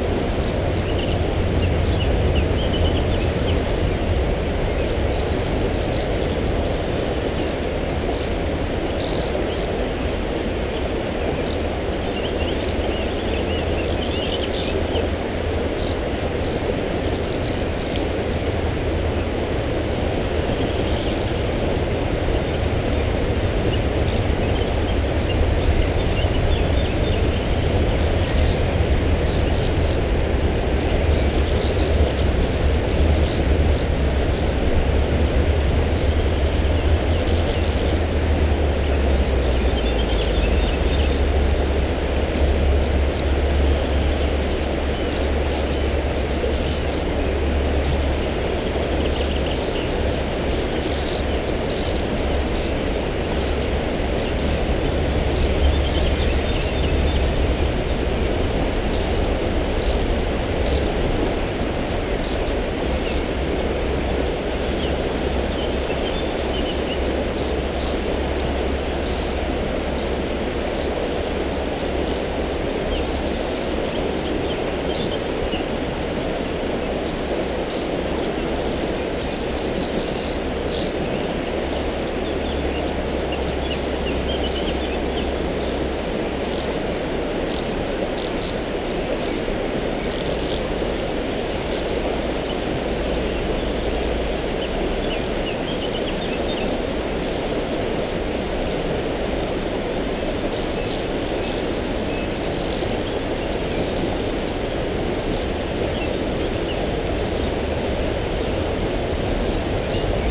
{
  "title": "Volarje, Tolmin, Slovenia - Soča near vilage Volarje",
  "date": "2020-05-24 09:42:00",
  "description": "River soča and some birds singing.\nRecorded with ZOOM H5 and LOM Uši Pro, Olson Wing array. Best with headphones.",
  "latitude": "46.21",
  "longitude": "13.67",
  "altitude": "171",
  "timezone": "Europe/Ljubljana"
}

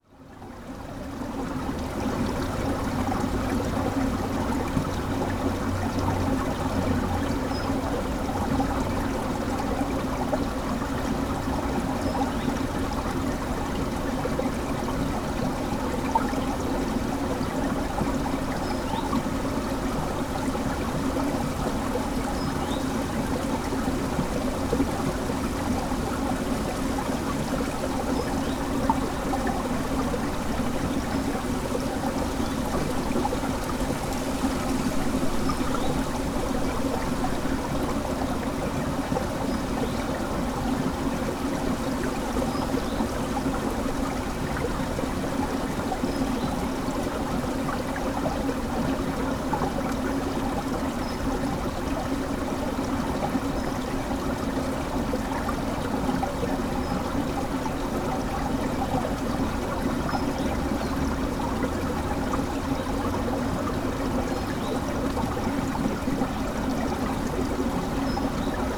{
  "title": "burg/wupper: bach - the city, the country & me: frozen creek",
  "date": "2012-02-08 13:53:00",
  "description": "the city, the country & me: february 8, 2012",
  "latitude": "51.13",
  "longitude": "7.15",
  "altitude": "116",
  "timezone": "Europe/Berlin"
}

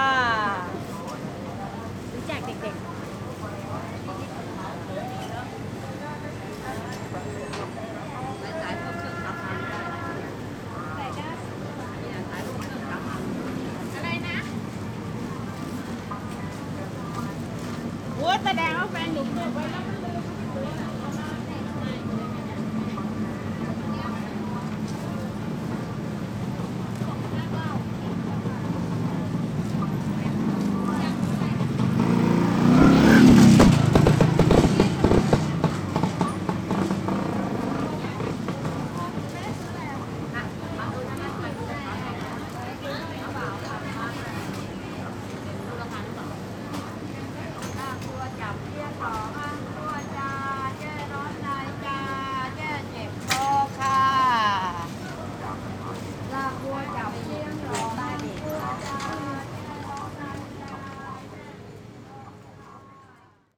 {
  "title": "Chakkrawat, Samphan Thawong, Bangkok, Thailand - drone log 10/03/2013",
  "date": "2013-03-10 17:46:00",
  "description": "Sanpeng Lane, streetnarket\n(zoom h2, build in mic)",
  "latitude": "13.74",
  "longitude": "100.50",
  "timezone": "Asia/Bangkok"
}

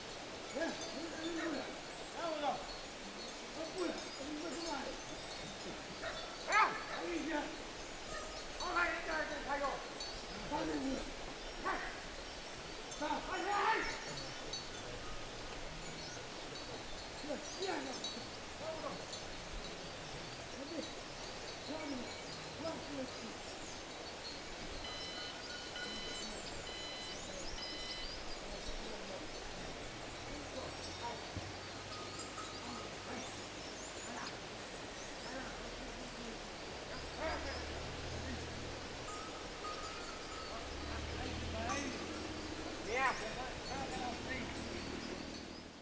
{
  "title": "Romania - Early morning with cows",
  "date": "2017-07-13 07:31:00",
  "description": "Recording made while sitting in the tent, early morning one summer, a herder and his cows pass by. Made with a SHURE MV 88.",
  "latitude": "45.22",
  "longitude": "22.10",
  "altitude": "883",
  "timezone": "Europe/Bucharest"
}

